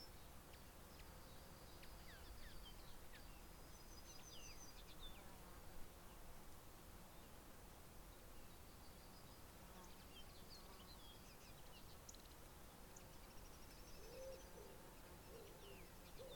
Exeter, UK - North Wood Forestry England- insects & birds
This recording was taken using a Zoom H4N Pro. It was recorded at North Wood part of the Forestry England Haldon Forest but this area is seldom visited although there is an occasional pheasant shoot here. Insects can be heard on the brambles and scrubby edges of the forest tracks and birds including a raven in the distance, can be heard. This recording is part of a series of recordings that will be taken across the landscape, Devon Wildland, to highlight the soundscape that wildlife experience and highlight any potential soundscape barriers that may effect connectivity for wildlife.
South West England, England, United Kingdom